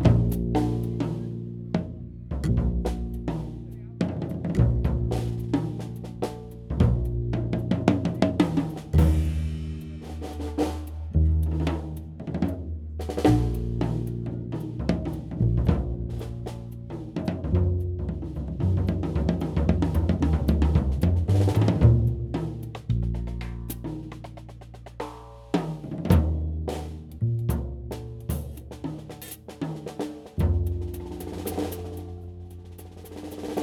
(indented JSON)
{
  "title": "Borov gozdicek, Nova Gorica, Slovenia - Koncert, Trio slučaj",
  "date": "2017-06-22 19:45:00",
  "description": "Trio Slučaj sestavljajo Urban Kušar, Francesco Ivone in Matjaž Bajc.",
  "latitude": "45.96",
  "longitude": "13.65",
  "altitude": "108",
  "timezone": "Europe/Ljubljana"
}